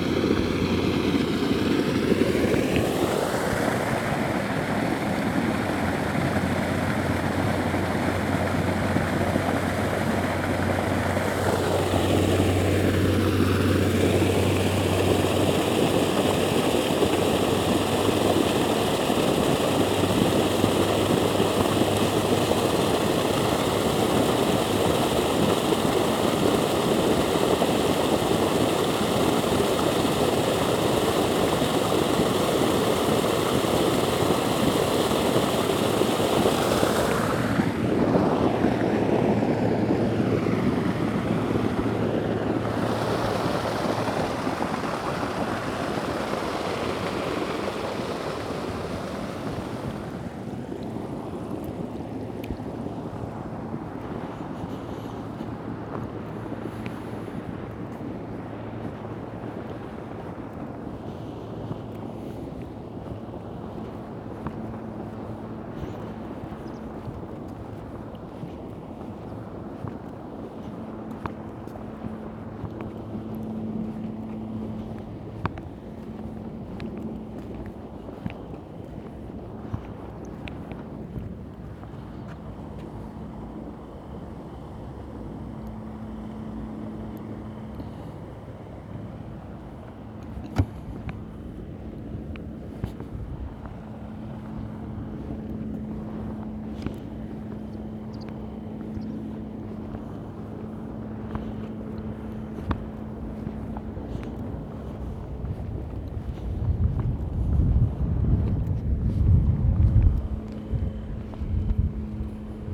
Zabrušany, Česká republika - odkaliště elektrárny Ledvice
voda s popílkem teče z dlouhých potrubí a odtéka do jezera